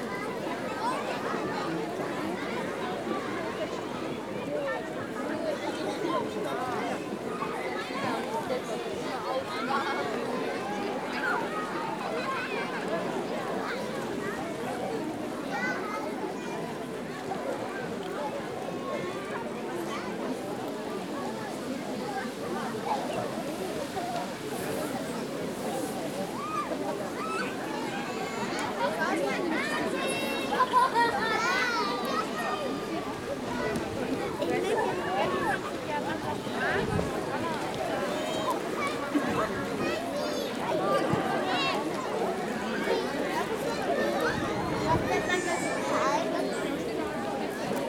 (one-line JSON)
{"title": "Neu-Ulm, Deutschland - Summer Kids", "date": "2012-08-13 15:28:00", "description": "A small Lake where kids and people are playing and chilling", "latitude": "48.43", "longitude": "10.04", "altitude": "466", "timezone": "Europe/Berlin"}